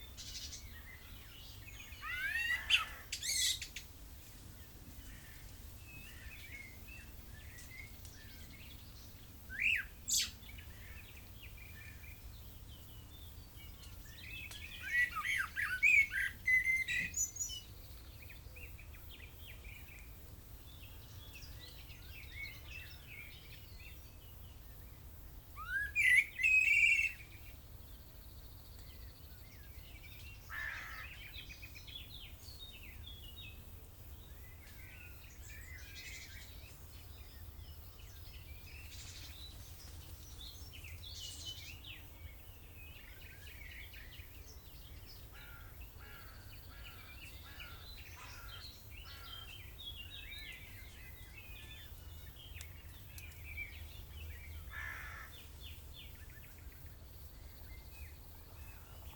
Lago di Martignano, Anguillara Sabazia RM, Italy - On a dusty road
Between Bracciano and Martignano lakes, in the old dried crater called "Stracciacappe".
Wind, distant planes and some occasional cyclist that passes on the track chatting. Lot of animals, mainly crows.
Using Clippy EM 272 into Tascam DR100 MKII hanged on tree branches (sort of AB stereo recording spaced approx. 1m)
No filter applied, just some begin/end trim
Roma Capitale, Lazio, Italia, 20 June 2021